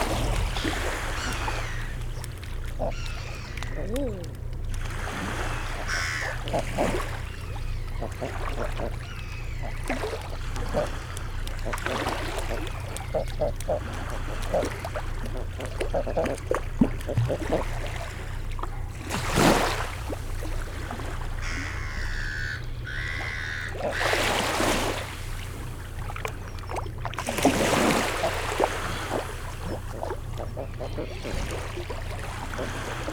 Seahouses, UK - feeding eider ducks ... with bread ...
Seahouses harbour ... feeding eider ducks with bread ... bit surreal that ... male and female calls ... pattering of their webbed feet ... calls from herring gulls ... black-headed gulls ... house sparrow ... much background noise ... lavalier mics clipped to baseball cap ...